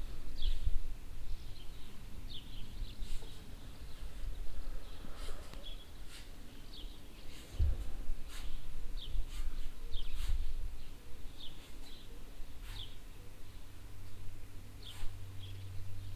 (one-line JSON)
{"date": "2011-08-03 14:27:00", "description": "On the main street of the small town on a warm summer and mellow windy evening.\nThe sound of a private garden fountain, swallows in the sky, some working noise from a nearby barn yard and a tractor passing by.\nUnfortunately some wind disturbances\nMerscheid, Rue de Wahlhausen\nAuf der Hauptstraße des kleinen Ortes an einem warmen und milden windigen Sommerabend. Der Geräusch von einem privaten Gartenspringbrunnen, Schwalben in der Luft, etwas Arbeitslärm von einer nahen Scheune und ein Traktor, der vorbei fährt. Leider einige Windstörungen.\nMerscheid, rue de Wahlhausen\nSur la route principale de la petite ville, le soir d’une chaude et douce journée d’été venteuse.\nLe bruit d’une fontaine privée dans un jardin, des hirondelles dans le ciel, le bruit de travaux dans une basse-cour proche et un tracteur qui passe. Malheureusement avec les perturbations sonores du vent.\nProject - Klangraum Our - topographic field recordings, sound objects and social ambiences", "latitude": "49.95", "longitude": "6.11", "altitude": "485", "timezone": "Europe/Luxembourg"}